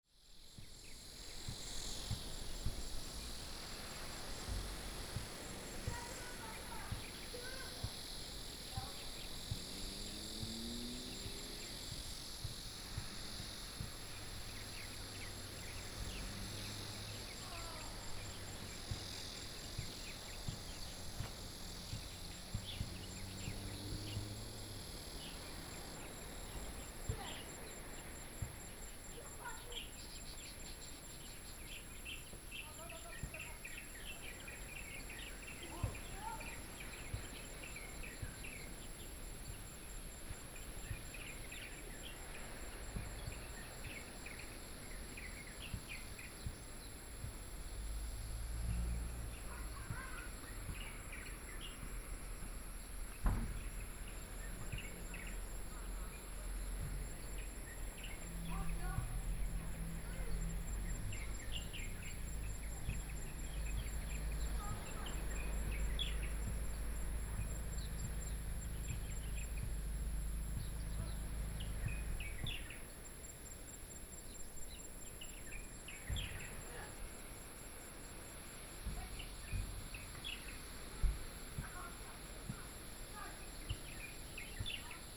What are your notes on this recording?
In the Waterfowl Sanctuary, Hot weather, Birdsong sound, Small village, Cicadas sound, Sound of the waves